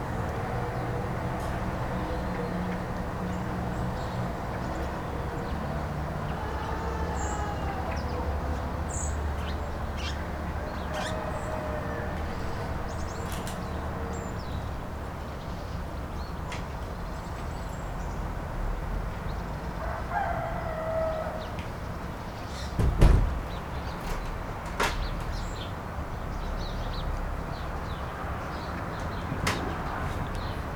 Apterou, Vamvakopoulo, Greece - in front of the apartment
a bit of area ambience in the suburbs of Chania. (sony d50)